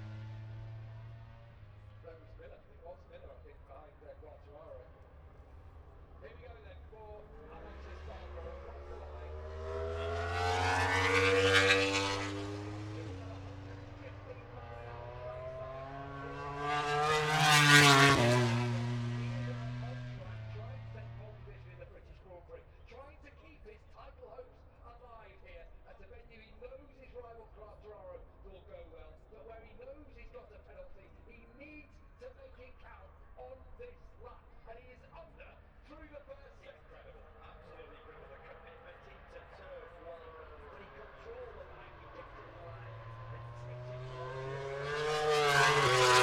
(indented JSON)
{
  "title": "Silverstone Circuit, Towcester, UK - british motorcycle grand prix 2022 ... moto grand prix ...",
  "date": "2022-08-06 14:27:00",
  "description": "british motorcycle grand prix 2022 ... moto grand prix qualifying two ... dpa 4060s on t bar on tripod to zoom f6 ...",
  "latitude": "52.08",
  "longitude": "-1.01",
  "altitude": "158",
  "timezone": "Europe/London"
}